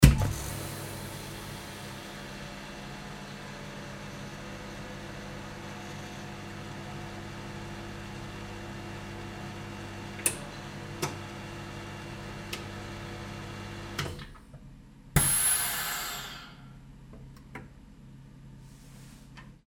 monheim, klappertorstr, fischräucherei - monheim, klappertorstr, fischräucherei, vakuum
bedienung der vakuum maschine zur fischverpackung
soundmap nrw - social ambiences - sound in public spaces - in & outdoor nearfield recordings
klappertorstr, fischräucherei weber